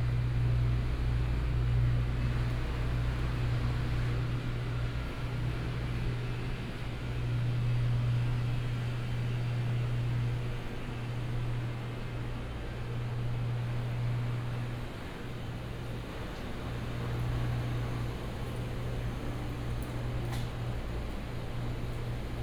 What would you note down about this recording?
Rest in the market, Traffic sound, Walk through the market